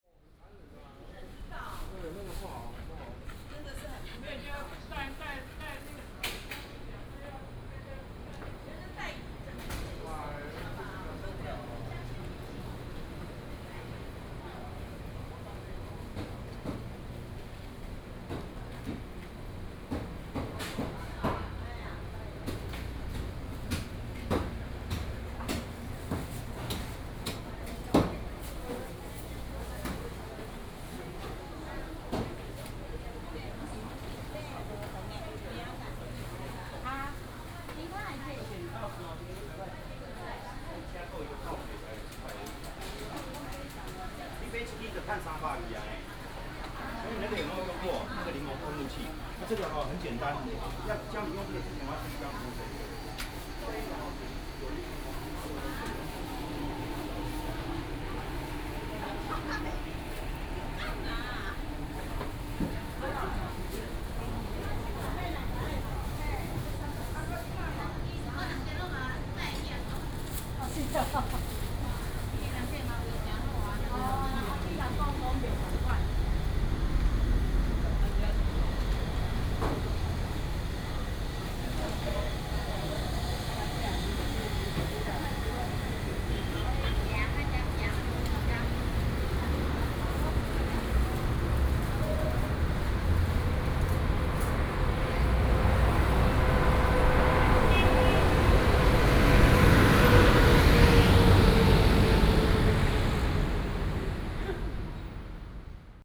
古亭市場, Da’an Dist., Taipei City - Walking in the traditional market
Walking in the traditional market, Small alley